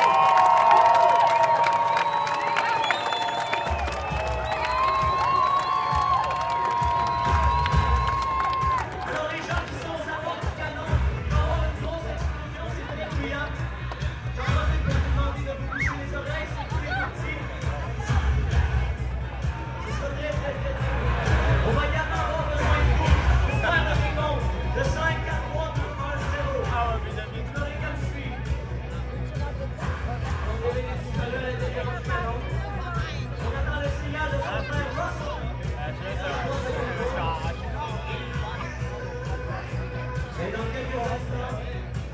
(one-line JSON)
{"title": "Place des Arts, Montreal, Lhomme canon", "date": "2010-07-18 20:30:00", "description": "World Listening Day.\nFestival Juste Pour Rire", "latitude": "45.51", "longitude": "-73.57", "altitude": "30", "timezone": "America/Montreal"}